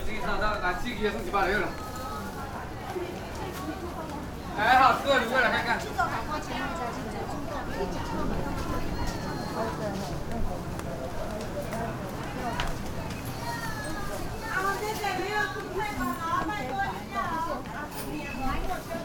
Walking through the traditional market
Binaural recordings
Sony PCM D50 + Soundman OKM II